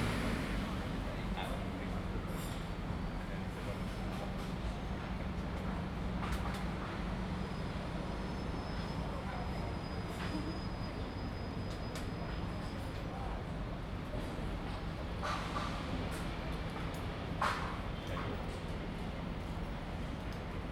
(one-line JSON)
{"title": "Victoria Square, London. - Victoria Square Building Work.", "date": "2017-06-30 12:48:00", "description": "Victoria Square is usually a quiet spot in the middle of London. However, on this occasion there was building work taking place at one of the properties. Also one or two impatient drivers. Zoom H2n.", "latitude": "51.50", "longitude": "-0.14", "altitude": "16", "timezone": "Europe/London"}